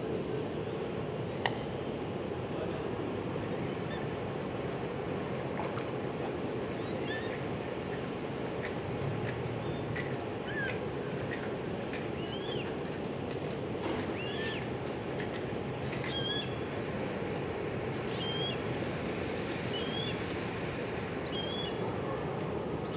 A warm and sunny day at the Historic Delfshaven. You can hear the birds flying around and moving in the water as well as few people passing by. At 4´55 you can hear the carillon from Pelgrimvaderskerk. Recorded with a parabolic Dodotronic mic